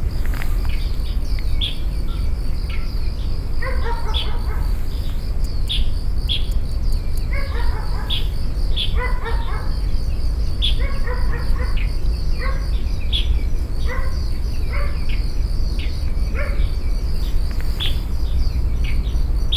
關渡自然公園, Taipei City - bird, dog